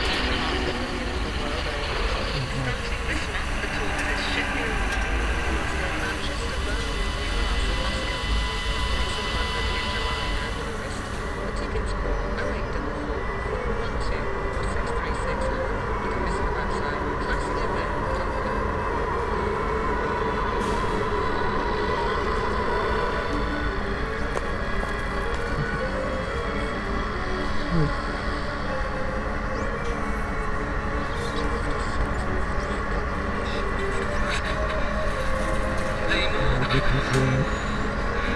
Ormeau Park
Laying down by the tree
United Kingdom, European Union